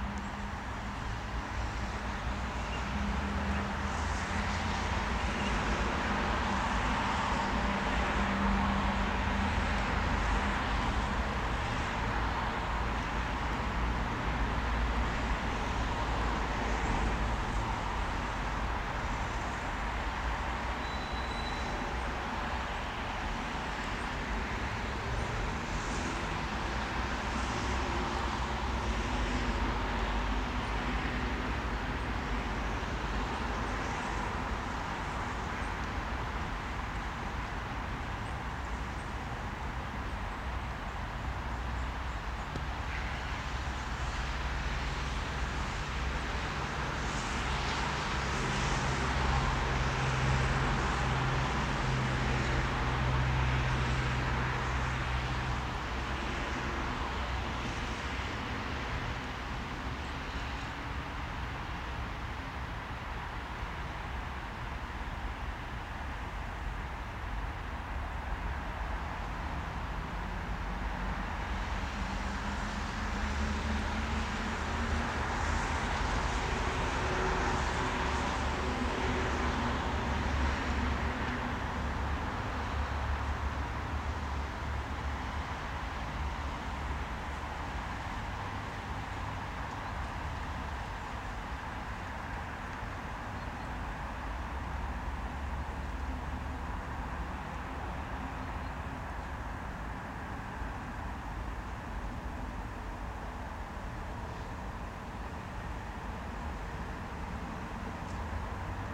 Vilnius, Lithuania, Rasos cemetery
Vilnius city soundscape from the grave of greatest lithuanian composer M. K Ciurlionis
Vilniaus apskritis, Lietuva